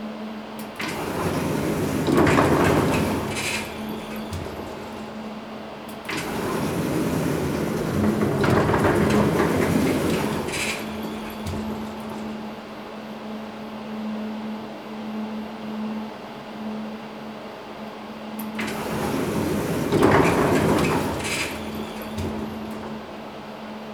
elevator door broken down. they wouldn't close full and the elevator couldn't move. sliding back and forth in an irregular manner.